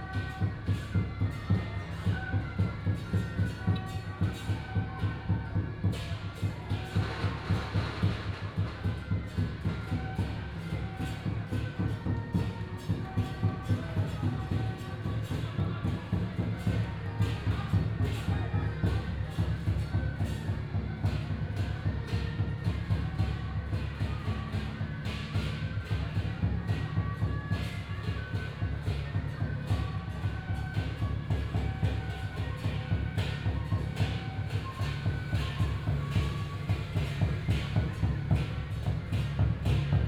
Temple Fair, Parade Formation, firecracker
Tamsui District, New Taipei City, Taiwan, 30 May 2017, ~19:00